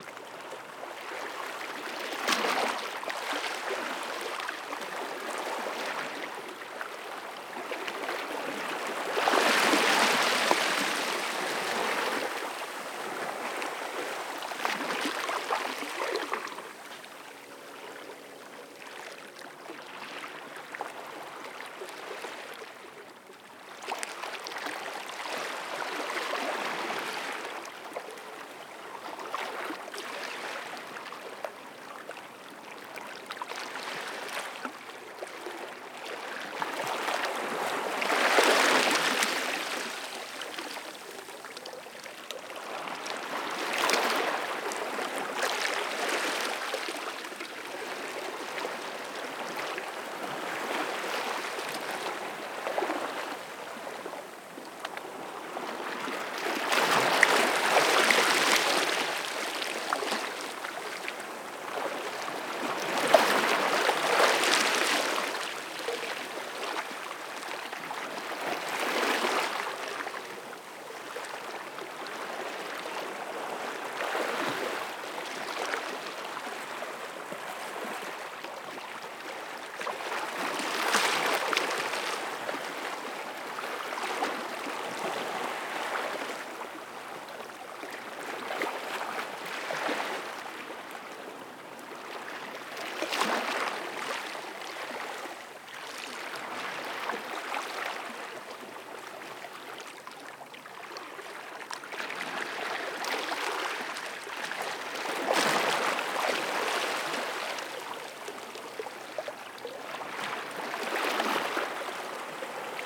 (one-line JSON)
{"title": "Valle del San Lorenzo, Italien - San Lorenzo al Mare - At the head of the groyne, at the same spot as the under water recording", "date": "2015-08-28 17:00:00", "description": "[Hi-MD-recorder Sony MZ-NH900, Beyerdynamic MCE 82]", "latitude": "43.85", "longitude": "7.96", "altitude": "3", "timezone": "Europe/Rome"}